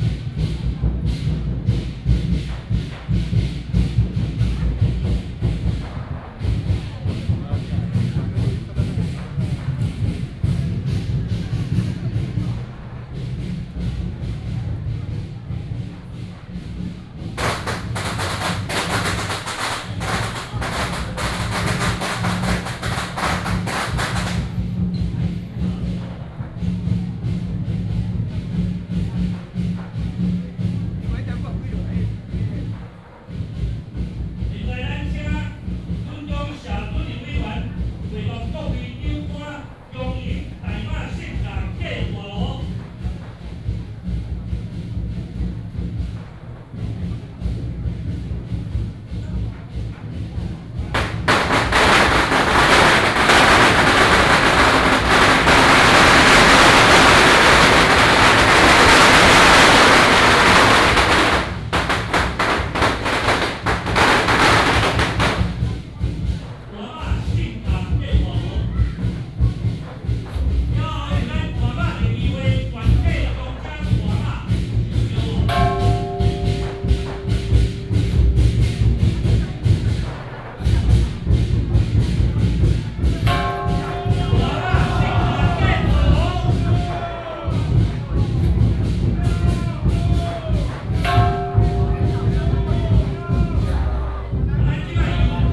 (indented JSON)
{
  "title": "淡水福佑宮, New Taipei City - Walking in the temple",
  "date": "2017-04-16 09:35:00",
  "description": "Walking in the temple, Firecrackers sound, temple fair",
  "latitude": "25.17",
  "longitude": "121.44",
  "altitude": "14",
  "timezone": "Asia/Taipei"
}